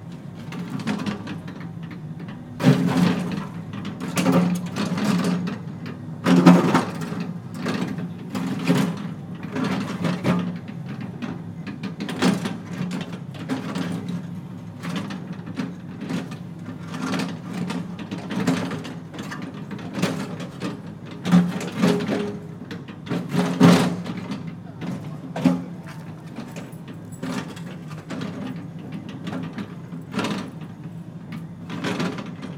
Custom House Quay, Weymouth, UK - Removing train tracks alongside the harbour.
A recording of the removal of the train tracks in Weymouth which ran from the railway station to the ferry terminal alongside the harbour. The cross channel ferry to The Channel Islands and France was discontinued in 2015. The last scheduled trains stopped running in the 1980's.
Recorded with a Tascam DR-05X, edited in Audacity.